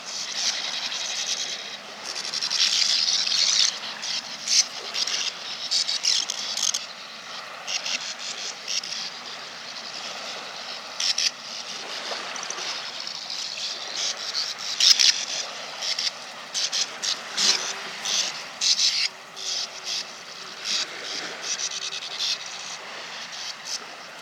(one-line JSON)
{"title": "Baltic Sea, Nordstrand Dranske, Rügen - Bank swallows full on", "date": "2021-05-30 19:20:00", "description": "A swallows breeding colony in the sandy cliffs at the wild northern beach\nOlympus LS11, AB_50 stereo setup with a pair of pluginpowered PUI-5024 diy mics", "latitude": "54.65", "longitude": "13.23", "altitude": "7", "timezone": "Europe/Berlin"}